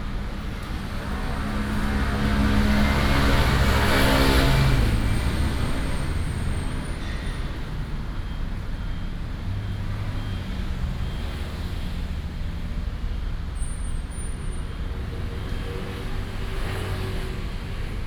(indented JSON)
{
  "title": "Zhongzheng Rd., Shilin Dist., Taipei City - Traffic sound and pedestrian",
  "date": "2016-12-28 16:25:00",
  "description": "Traffic sound, pedestrian",
  "latitude": "25.09",
  "longitude": "121.52",
  "altitude": "12",
  "timezone": "GMT+1"
}